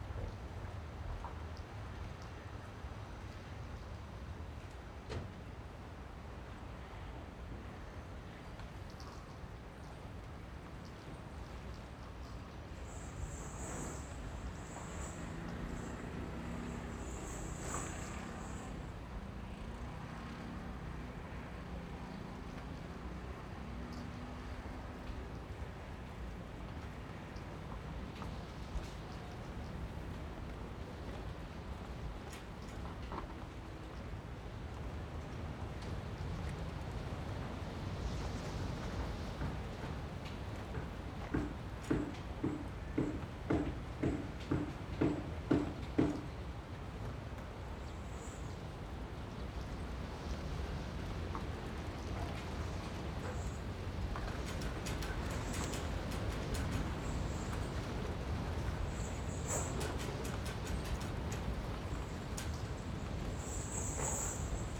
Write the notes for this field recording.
Fishing port side, Windy, Zoom H6 + Rode NT4